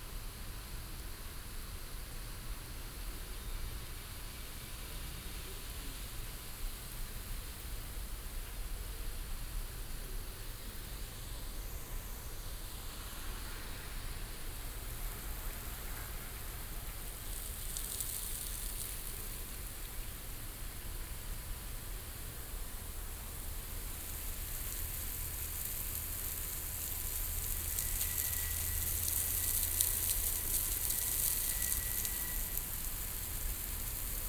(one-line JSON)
{"title": "wolfsburg, autostadt, rasensprenkleranlage", "description": "automatische rasensprenkleranlage der vw autostadt, morgens\nsoundmap\ntopographic field recordings, social ambiences", "latitude": "52.43", "longitude": "10.79", "altitude": "64", "timezone": "GMT+1"}